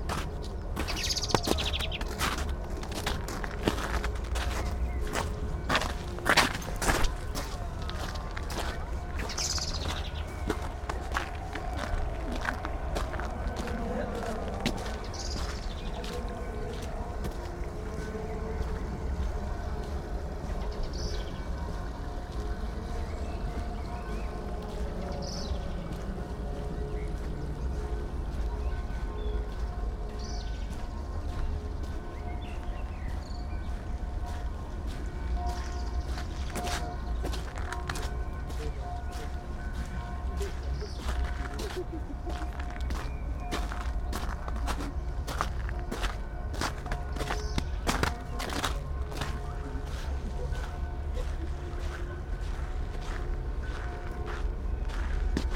sonopoetic path, Maribor, Slovenia - walking poem
steps, blackbird, passer-by, distant cheering, stream, snow, spoken words, bells ...
6 March, 18:01